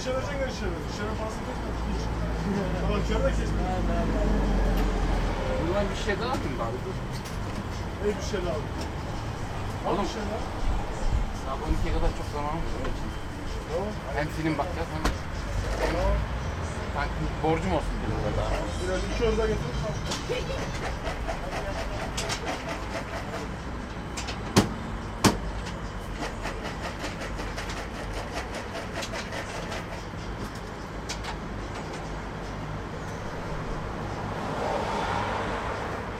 berlin, kottbusser damm: imbiss - the city, the country & me: fried chicken takeaway
people talking at fried chicken takeaway
the city, the country & me: may 9, 2008